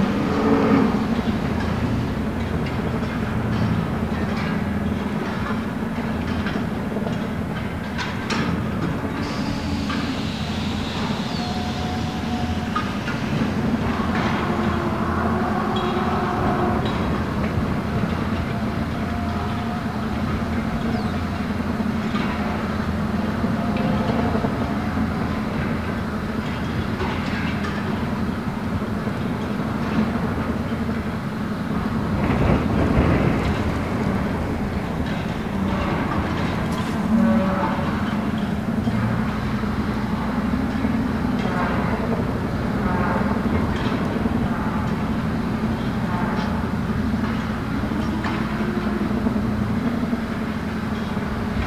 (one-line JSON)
{"title": "Tidy View Dairy Farm, Freedom, WI, USA - Windstorm at the largest dairy farm in Wisconsin", "date": "2013-05-11 18:54:00", "description": "Over 8000 cows live inside the sheds here at the Tidy View Dairy farm, the largest of many industrial milk factories in Wisconsin, a state rich in protein. Windstorm rattled cages, ventilation turbines... upset the herd, startled birds... The stench of all that manure and fermenting silage is powerful enough miles away. Imagine what the cows inside these sheds have to put up with night and day. This is NOT a free range farm.", "latitude": "44.37", "longitude": "-88.26", "altitude": "222", "timezone": "America/Chicago"}